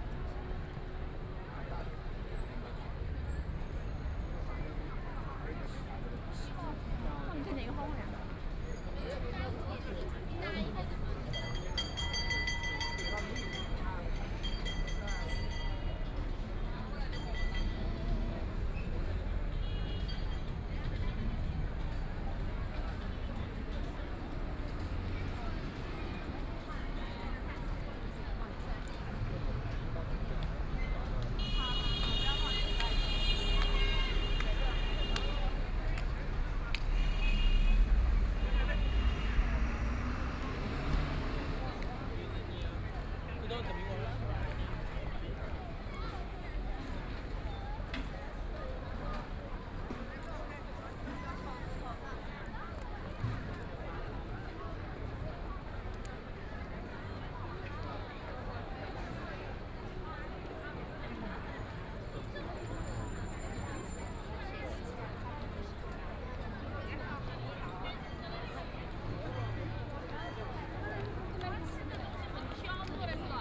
Nanjin Road, Shanghai - soundwalk
walking in the Store shopping district, Walking through the streets of many tourists, Binaural recording, Zoom H6+ Soundman OKM II